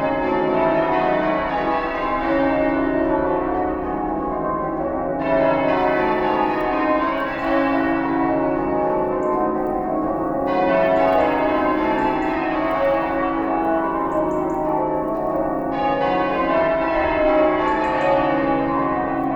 Remembrance Day, Worcester Cathedral, UK - Remembrance Day Bells
Recorded from College Green at the back of the cathedral to reduce traffic noise and concentrate on the bells. A single gun salute, the Bourdon Bell strikes 11am. a second gun, muffled singing from inside the cathedral then the bells make their own partly muffled salute. They rang for much longer tha this recording. Recorded with a MixPre 3, 2 x Sennheiser MKH 8020s and a Rode NTG3 shotgun mic.
West Midlands, England, United Kingdom, 10 November 2019